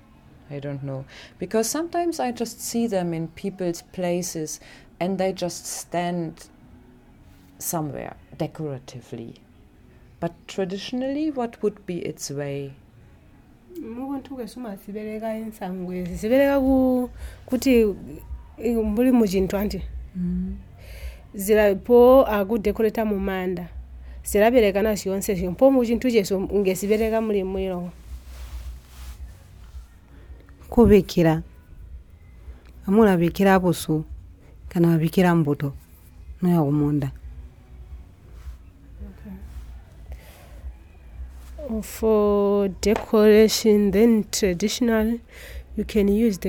Binga Craft Centre, Binga, Zimbabwe - Barbara Mudimba - baskets for offerings, food and decor...
Traditionally, Barbara tells us, the baskets may be used for food and eating or, to give offerings to the ancestors; large, robust baskets are used for seeds in agricultural work. Today, basket weaving is appreciated as an art in its own right.
9 November 2012